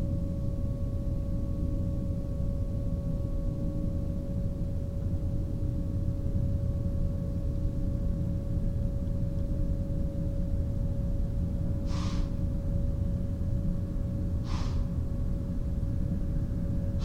Commercial Township, NJ, USA - the sand plant and the deer
Multilayered drones dominate the soundscape of a forest surrounding an operational sand plant. A deer announces its displeasure of my being present halfway through. There is no sound manipulation in this recording.